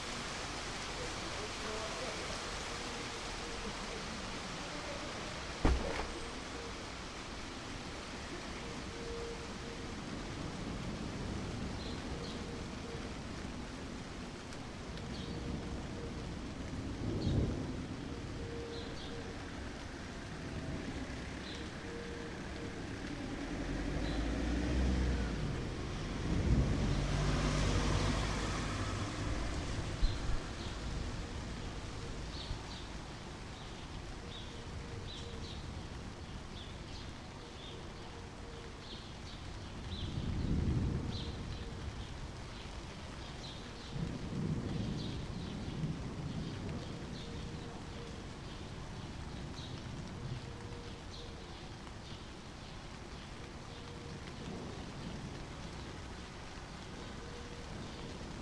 Rain, downtown Bucharest
Rain beginning to pour in the yard behind the apartment buildings
Bucharest, Romania, June 2011